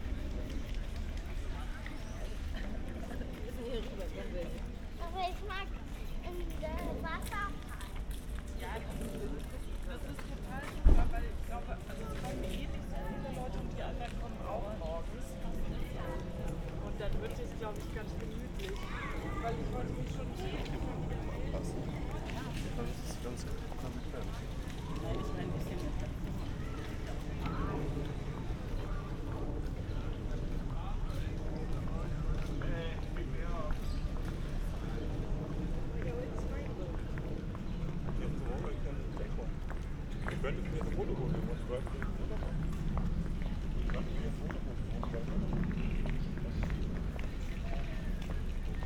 maybachufer, markt, eingang - Landwehrkanal ambience
Saturday early evening at the Landwehrkanal, people passing-by, others gather along the canal, buskers playing, relaxed atmosphere
(log of the live radio aporee stream, iphone 4s, tascam ixj2, primo em172)
Berlin